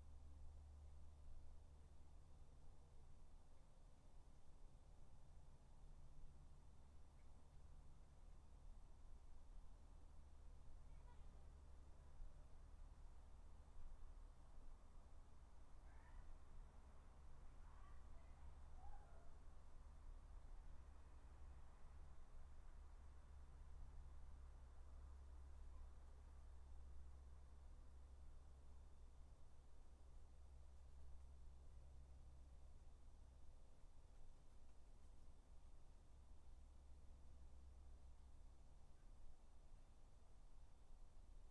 3 minute recording of my back garden recorded on a Yamaha Pocketrak
Dorridge, Solihull, UK, 13 August 2013, 16:00